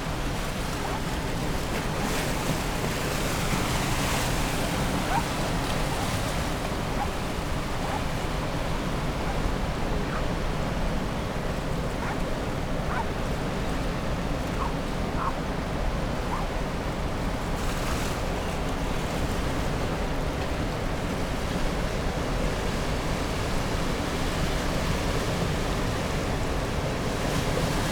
East Lighthouse, Battery Parade, Whitby, UK - east pier ... outgoing tide ...
east pier ... outgoing tide ... lavalier mics clipped to T bar on fishing landing net pole ...